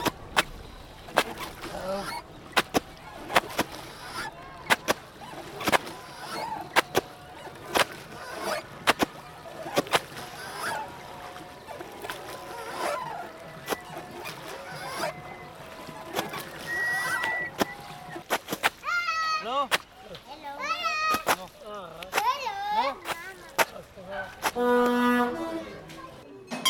Lord Varahar Shire, Dashashwamedh Ghat Rd, near Fish Market, Near Ganga River, Dashaswmedh Ghat, Bangali Tola, Varanasi, Uttar Pradesh, Inde - Dashashwamedh Ghat - Benares - India
Dashashwamedh Ghat - Benares - India
Petit mix de diverses ambiances